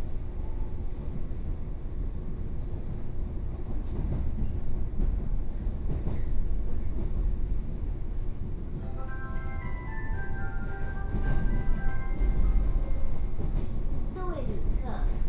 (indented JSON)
{
  "title": "Hsinchu City, Taiwan - Local Train",
  "date": "2013-09-24 16:36:00",
  "description": "from Zhubei Station to Hsinchu Station, on the train, Sony Pcm d50, Binaural recordings",
  "latitude": "24.81",
  "longitude": "120.99",
  "altitude": "29",
  "timezone": "Asia/Taipei"
}